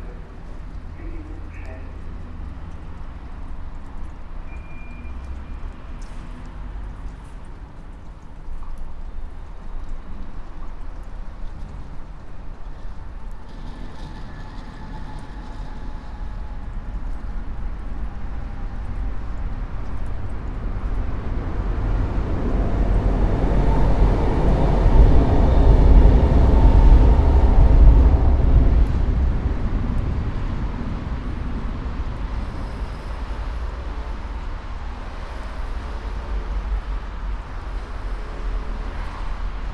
Zürich West, Schweiz - Brücken am Toni-Areal
Brücken am Toni-Areal, Zürich West